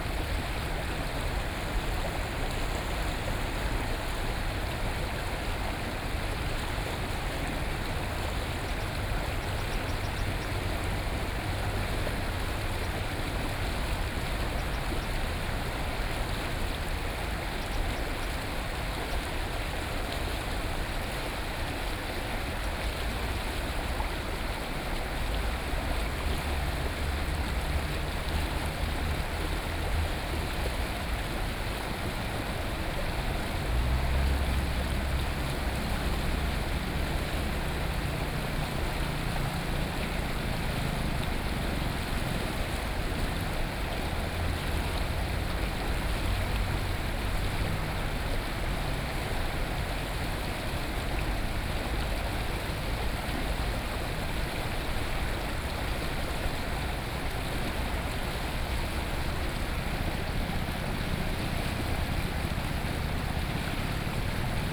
The sound of water, Sony PCM D50 + Soundman OKM II
Taoyuan City, Taoyuan County, Taiwan, 11 September 2013